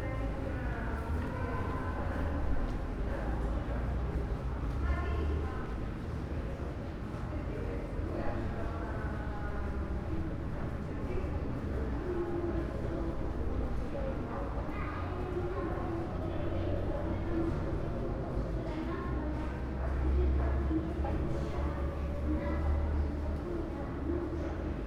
Prague, Czech Republic - Pedestrian Tunnel from Žižkov to Karlín
recorded as part of Radio Spaces workshop in Prague